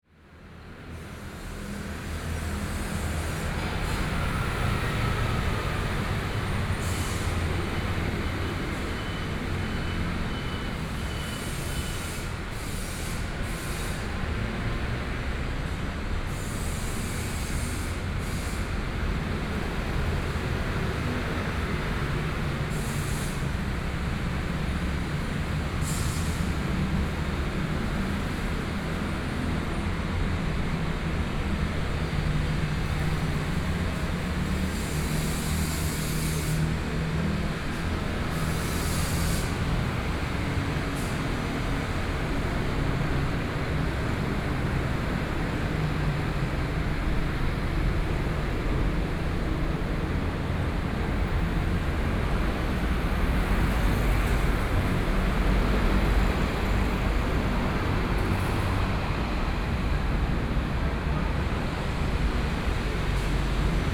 Jungli City, Taoyuan County - Noise
Traffic Noise, Factory noise, Sony PCM D50+ Soundman OKM II